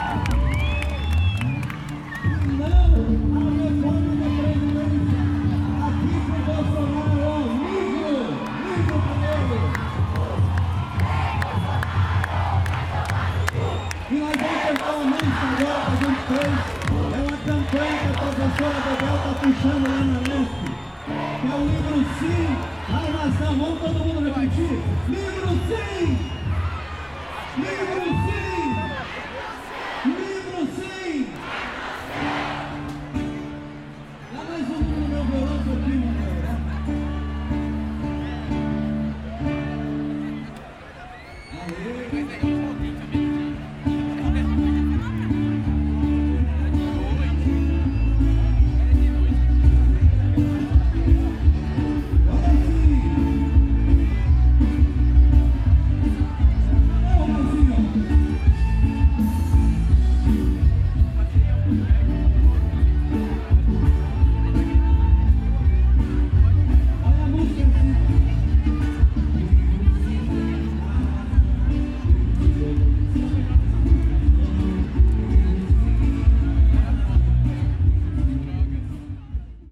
Av. Paulista - Bela Vista, São Paulo - SP, 01310-200, Brazil - 15M - Manifestação Pela Educação Pública - Livros Sim, Armas Não
Gravação da Manifestação 15M - Contra Cortes na Educação Pública Brasileira e contra a Reforma da Previdência. Gravação do orador dizendo Livros Sim Armas Não. Gravado com Zoom H4n - Mics internos - 120°
Reconding of 15M Public act against expense cut in education proposed by Jair Bolsonaro and against the Social Security Reform presented by Paulo Guedes. Recording of orator singing Yes Books, No Guns. Recorded on Zoom H4n - Internal Mics - 120º
May 2019